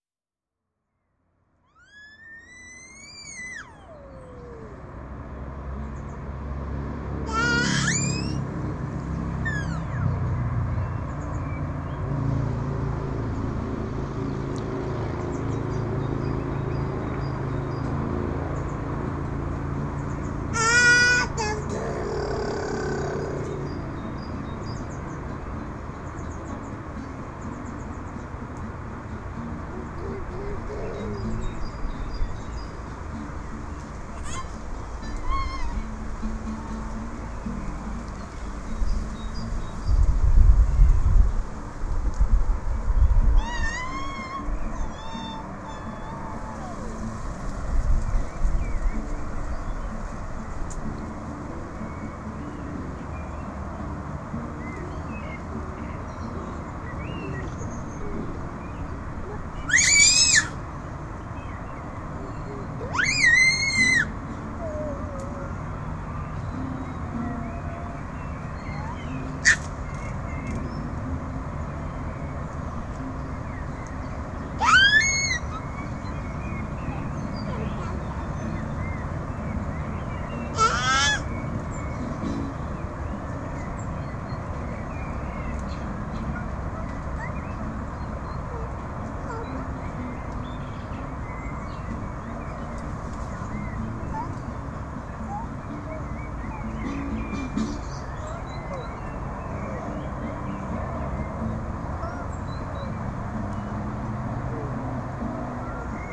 skrizkovska, folk garden
weekend at the gardens, people playing jazz, birds and kid singing